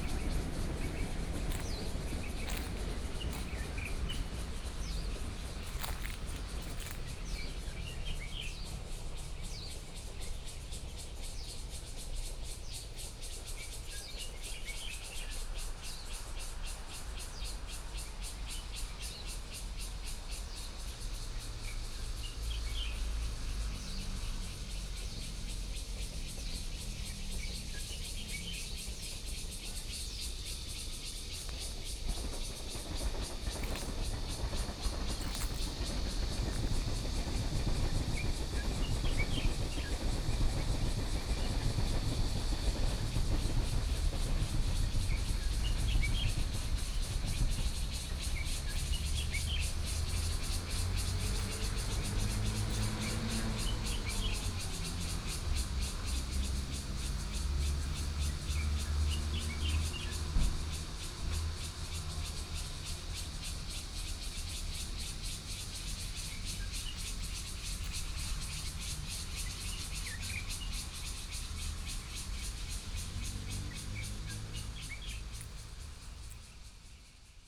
楊梅市富岡里, Taoyuan County - Abandoned factory
in theAbandoned factory, Birdsong sound, Cicadas sound, Traffic Sound, Far from the Trains traveling through
Taoyuan County, Taiwan